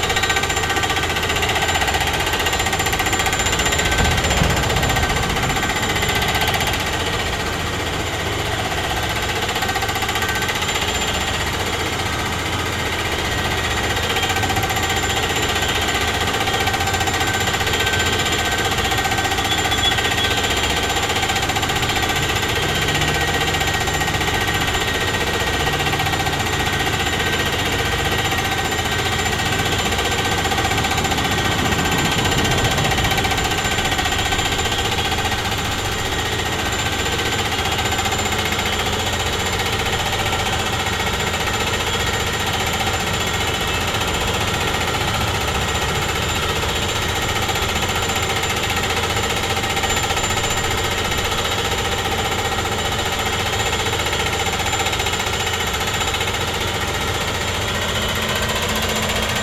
Jianshanpu Rd., Yingge Dist., New Taipei City - Construction Sound
Construction Sound
Zoom H4n XY+Rode NT4